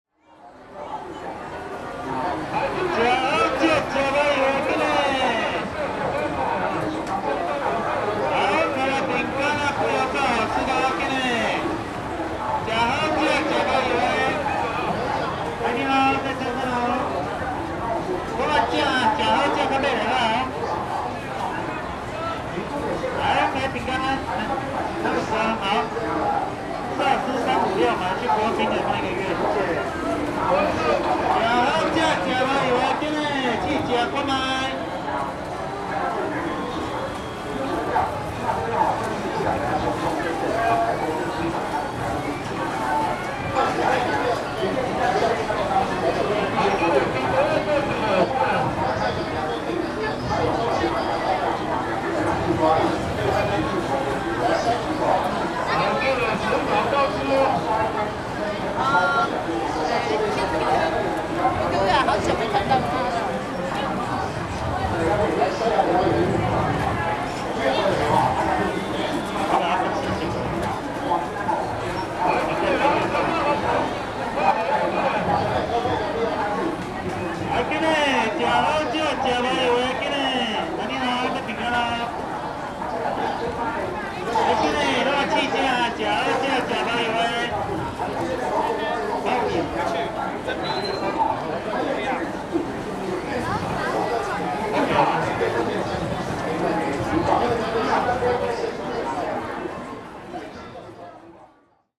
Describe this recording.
selling sound in the Sunset Market, Sony ECM-MS907, Sony Hi-MD MZ-RH1